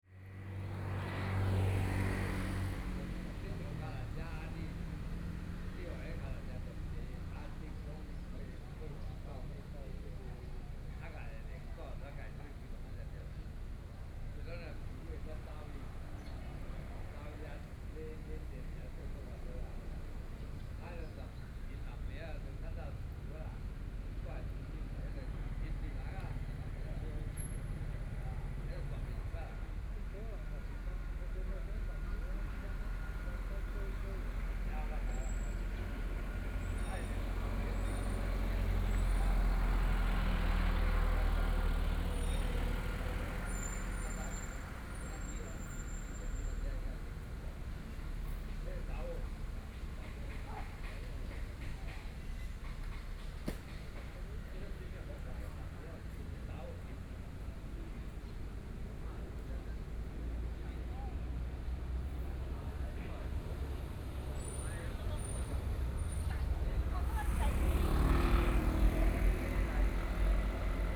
Ciaotou Sugar Refinery, Kaohsiung City - Under the tree
Rest in the park area, Sound from Transit Station, Hot weather